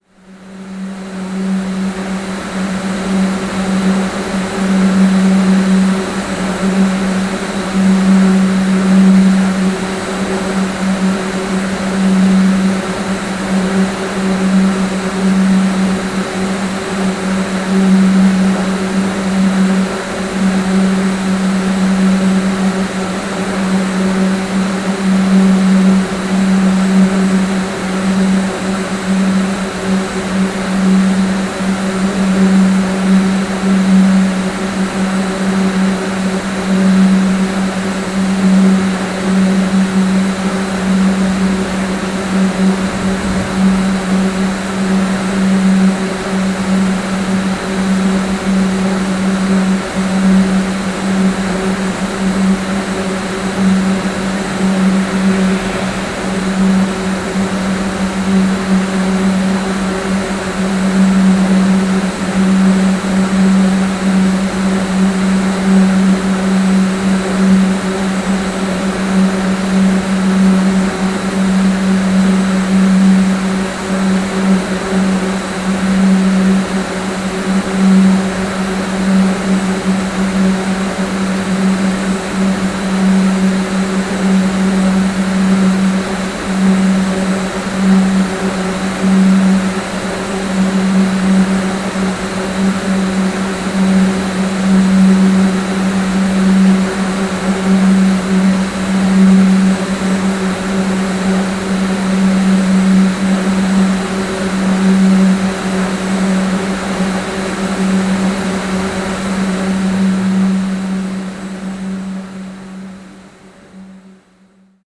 Žalgirio Arena, Karaliaus Mindaugo pr., Kaunas, Lithuania - Large air turbine
Stereo recording of a large air turbine / pump humming loudly underneath the venue. Recorded with ZOOM H5.